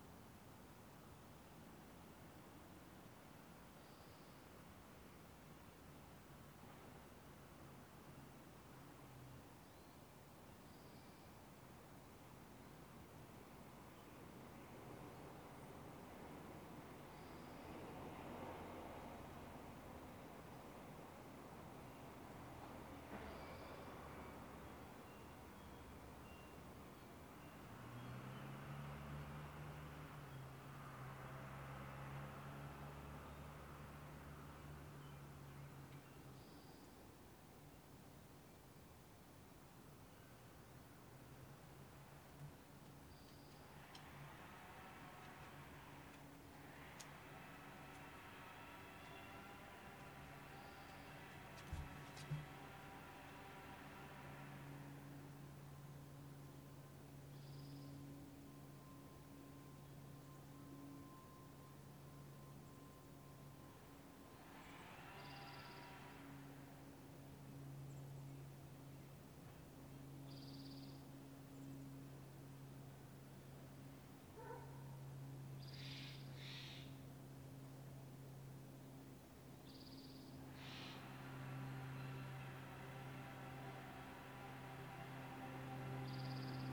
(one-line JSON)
{"title": "Sherwood Forest - Spring", "date": "2002-04-23 13:41:00", "description": "Dappled sunlight on a spring afternoon brings peaceful tranquility to the 'burbs, living here in status symbol land.\nMajor elements:\n* Leaf blowers\n* Lawn mowers\n* Birds, dogs, insects\n* Planes, trains & automobiles\n* Distant shouts from the elementary school playground\n* My dog settling down in the sun", "latitude": "47.79", "longitude": "-122.37", "altitude": "106", "timezone": "America/Los_Angeles"}